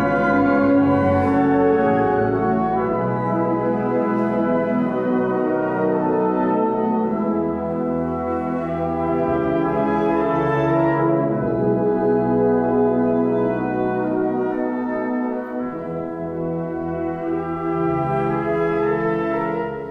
Canesianum Blasmusikkapelle Mariahilf/St. Nikolaus, vogelweide, waltherpark, st. Nikolaus, mariahilf, innsbruck, stadtpotentiale 2017, bird lab, mapping waltherpark realities, kulturverein vogelweide